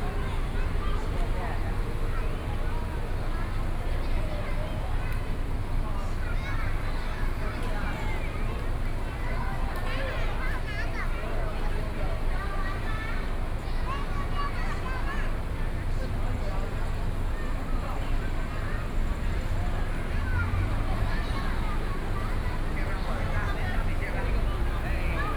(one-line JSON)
{"title": "湯圍溝溫泉公園, Jiaosi Township - Small Square", "date": "2014-07-21 20:05:00", "description": "Tourist, Traffic Sound, Various shops sound\nSony PCM D50+ Soundman OKM II", "latitude": "24.83", "longitude": "121.77", "altitude": "17", "timezone": "Asia/Taipei"}